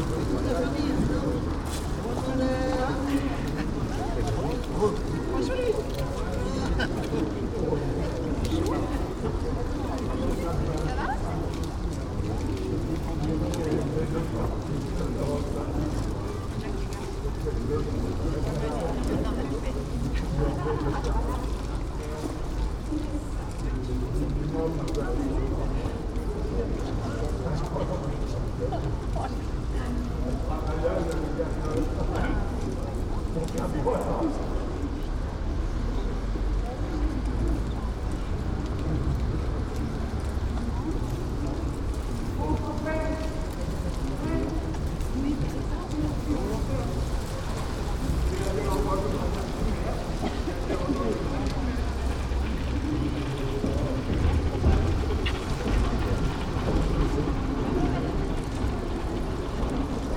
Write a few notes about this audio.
outside the cathedrale notre dame de rouen on a sunday afternoon, passersby and styrofoam ornaments blowing on a christmas tree